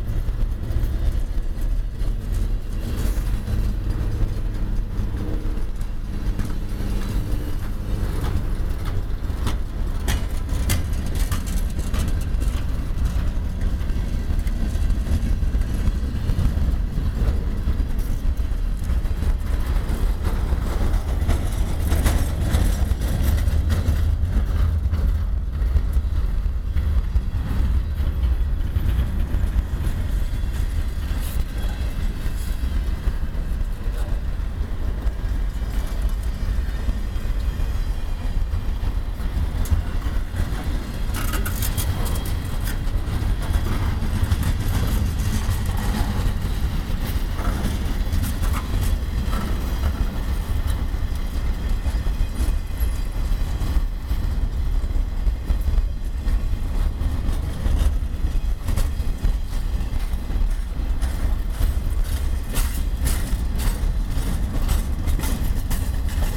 Montreal: Train Tracks in St. Henri - Train Tracks in St. Henri
equipment used: iPod DIY custom Binaural Headphone mounted mics DIY mic amplifiers and Belkin iPod interface
I wanted to capture the train sounds in St. Henri a historic rail hub of Quebec.It is a recoring of a complete train passing, with many different types of car going by so it makes it quite dynamic. Sadly you can hear my camera going off early in the recording, but i thought it was ok anyway...
QC, Canada, 26 January